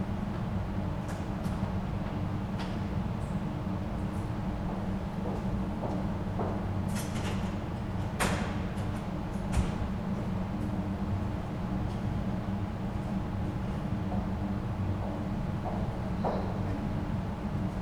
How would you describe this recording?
heating system of the protestant church, presbyter tidying up the church, the city, the country & me: october 15, 2011